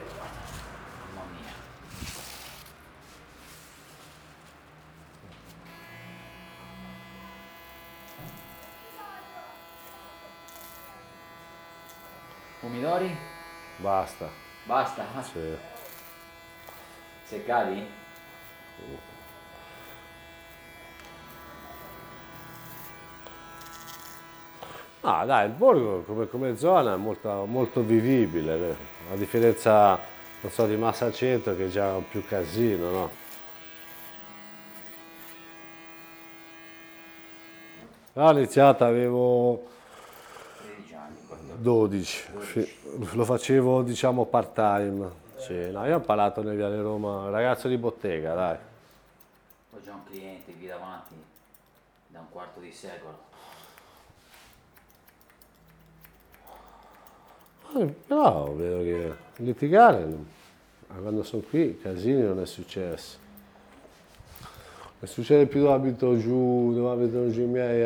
Via Palestro, Massa MS - Il barbiere
Mentre taglia i capelli a un suo cliente storico, Giovanni, il barbiere della borgata, racconta i meccanismi economici della concorrenza dei barbieri cinesi. Preso dal discorso si distrae, e taglia la basette allo storico cliente, che desiderava invece lasciarle lunghe.